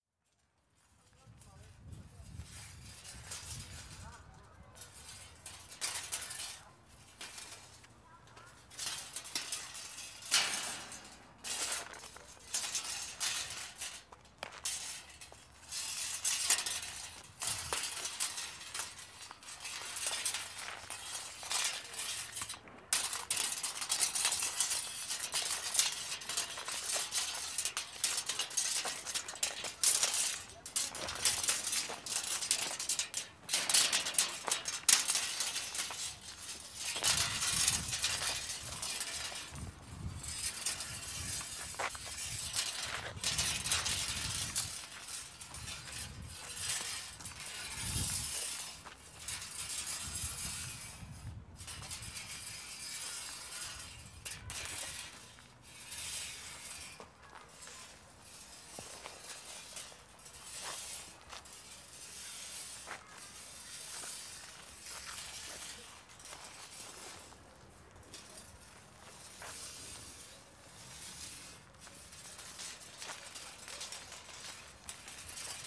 Srem, Zwirowa road, old paved road towards hospital - steel tape and a cat
dragging a long, twisted, found on a nearby construction site steel tape on the paved road. suddenly a young cat ran out from the site interested in the moving tape, chasing it and meowing.
Srem, Poland, 20 April 2014